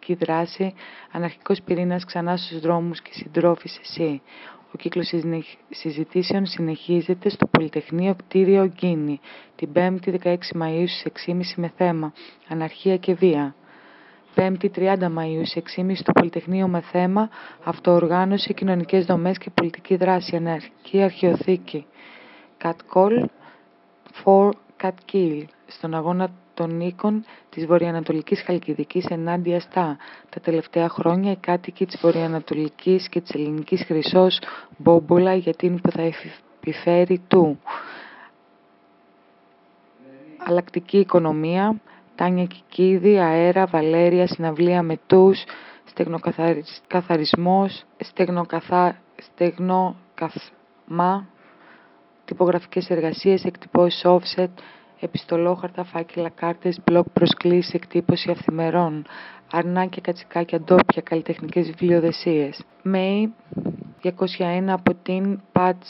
Greece, Athens, zoodoxou pigis - recording of readable printed information on the street wall
we are concidering the silent reading of the printed information on the streets of Athens as an additional soundscape.
28 September